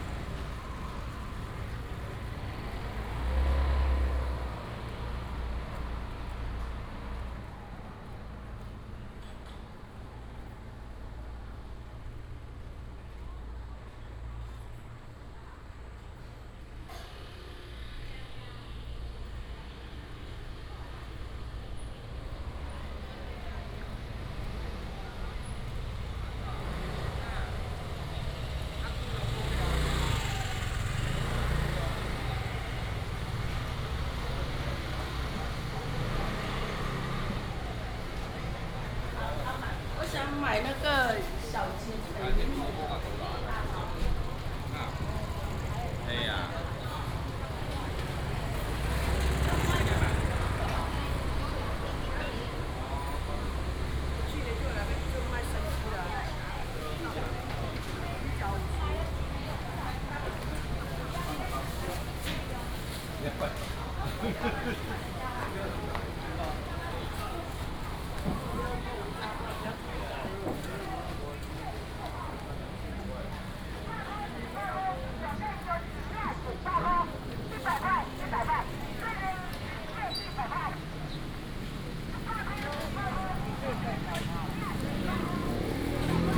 卓蘭鎮公有零售市場, Miaoli County - Walking in the market area
Walking in the market area, vendors peddling, Binaural recordings, Sony PCM D100+ Soundman OKM II